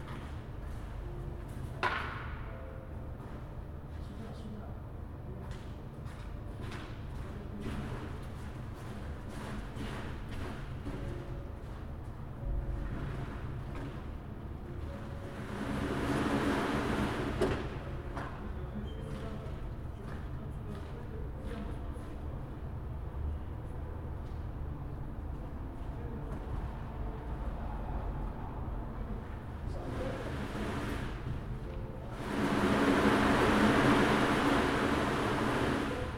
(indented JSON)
{
  "title": "Vabaduse väljak, Tallinn, Estonia - Cleaning of carpets",
  "date": "2019-10-20 15:26:00",
  "description": "Workers cleaning carpets in courtyard, someone is practicing piano, tram sound from far away",
  "latitude": "59.43",
  "longitude": "24.75",
  "altitude": "28",
  "timezone": "Europe/Tallinn"
}